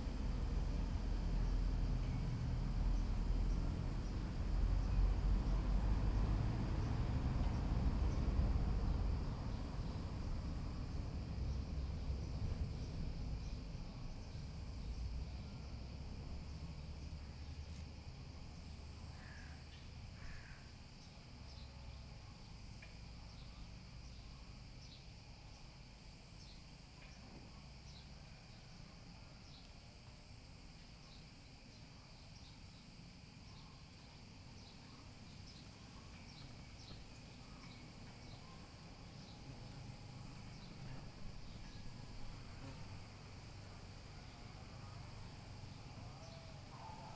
{"title": "Livadia, Andros, Greece - Church square at midday", "date": "2019-07-02 13:00:00", "description": "In the small square in front of the church, right by the road that ascends through the village. It is very quiet - only occasional cars and motorbikes pass by.", "latitude": "37.82", "longitude": "24.93", "altitude": "59", "timezone": "Europe/Athens"}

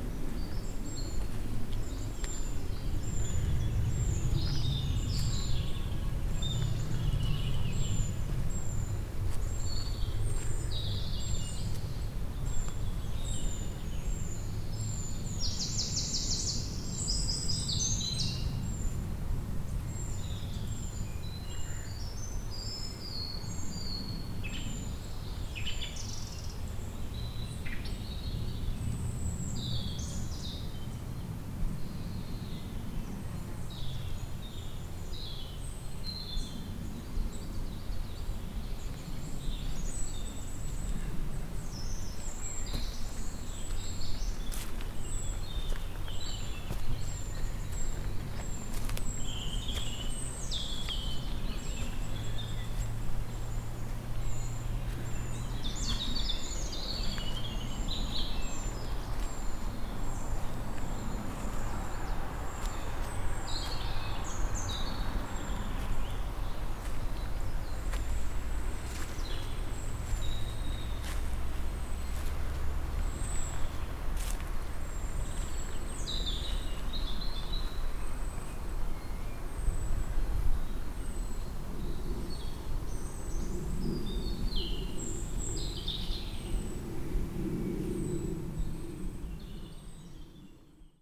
{"title": "Wald im Aufstieg zum Stierenberg", "date": "2011-06-12 12:50:00", "description": "Waldgeräusche im Aufstieg zum Stierenberg", "latitude": "47.39", "longitude": "7.63", "altitude": "873", "timezone": "Europe/Zurich"}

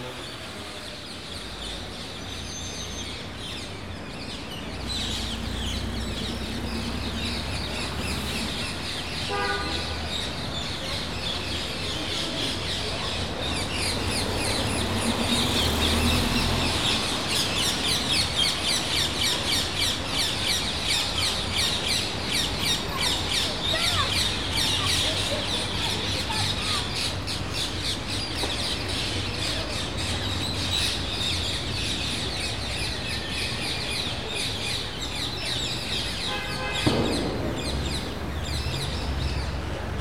{"title": "Jeanne d'Arc, Toulouse, France - Bird City", "date": "2021-11-20 17:23:00", "description": "Bird, City, Trafic, Car, Road, People Talk\ncaptation : Zoom h4n", "latitude": "43.61", "longitude": "1.45", "altitude": "151", "timezone": "Europe/Paris"}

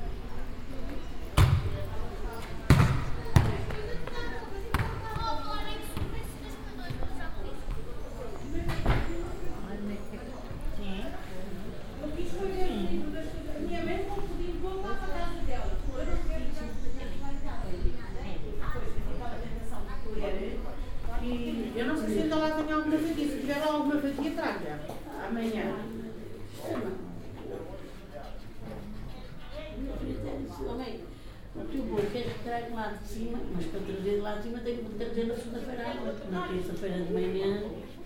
{"title": "Alfama - Lisbooa, Alfama, daily life", "date": "2010-12-11 20:14:00", "latitude": "38.71", "longitude": "-9.13", "altitude": "21", "timezone": "Europe/Lisbon"}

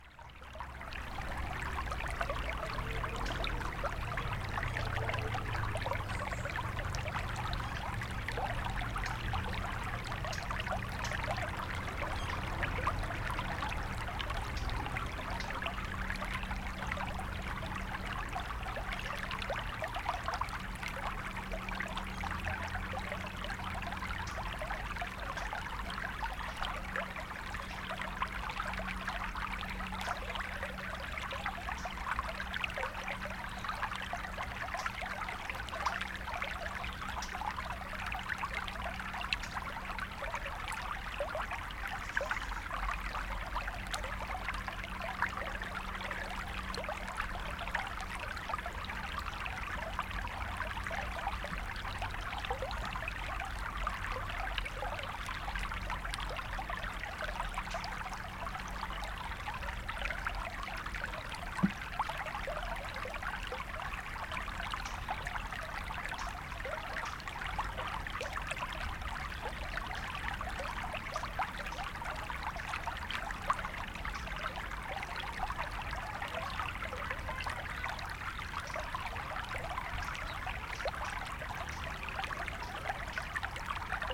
{"title": "Rue Eugène Fert, Aix-les-Bains, France - Filet d'eau", "date": "2022-07-31 11:30:00", "description": "Au bord du Sierroz au plus bas.", "latitude": "45.70", "longitude": "5.90", "altitude": "246", "timezone": "Europe/Paris"}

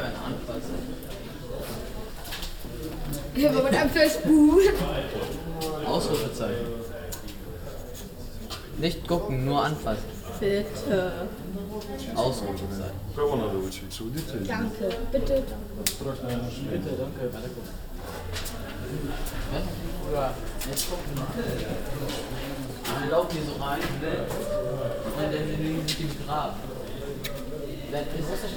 {"title": "Nicht gucken, nur anfassen! Frappant, Schülerausstellung. - Große Bergstraße/Möbelhaus Moorfleet", "date": "2009-10-31 15:38:00", "description": "Frappant Ausstellung Schülergruppe Gesamtschule Bahrenfeld mit 1500 Holzlatten. 12", "latitude": "53.55", "longitude": "9.94", "altitude": "34", "timezone": "Europe/Berlin"}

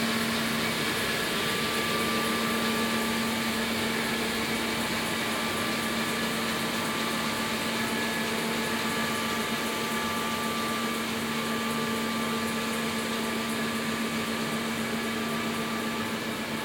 {"title": "Scierie d'en Haut, Saint-Hubert, Belgique - Turbines in the micro hydroelectric power plant", "date": "2022-05-28 12:30:00", "description": "Turbines dans la microcentrale hydroélectrique du Val de Poix.\nTech Note : SP-TFB-2 binaural microphones → Olympus LS5, listen with headphones.", "latitude": "50.02", "longitude": "5.29", "altitude": "328", "timezone": "Europe/Brussels"}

